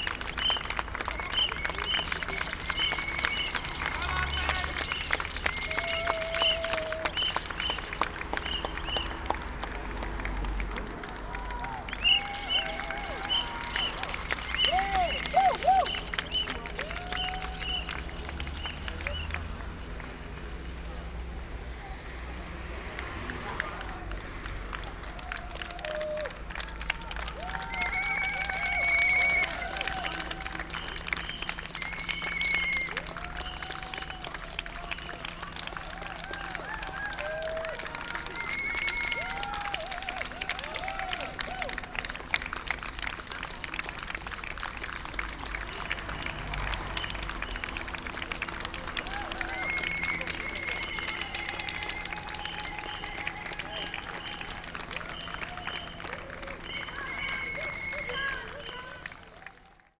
kottbusser damm, schönleinstr. - berlin marathon
28.09.2008 9:15
berlin marathon, km 16, kottbusser damm